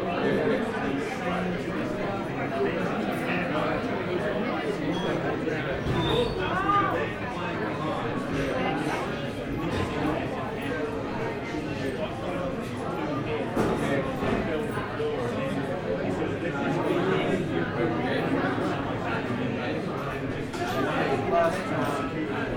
neoscenes: Royal Exchange on Sunday